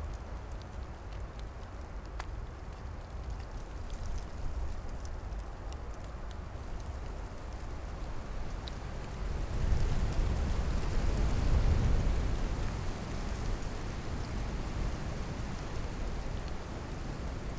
Tempelhofer Feld, Berlin, Deutschland - windy day at the poplars
an windy autumn day on the Tempelhof airfield, at the group of poplar trees.
(SD702, DPA4060)
18 November 2015, ~13:00